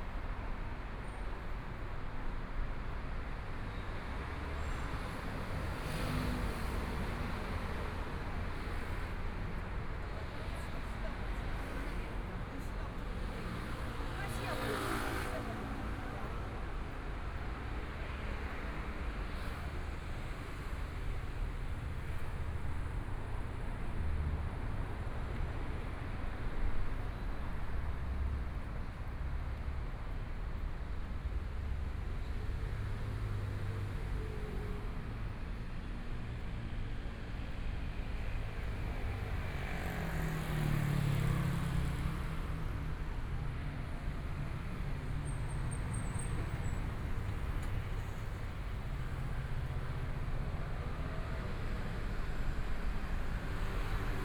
Sec., Jianguo N. Rd., Zhongshan Dist. - on the Road
Environmental sounds, Walking on the road, Motorcycle sound, Traffic Sound, Binaural recordings, Zoom H4n+ Soundman OKM II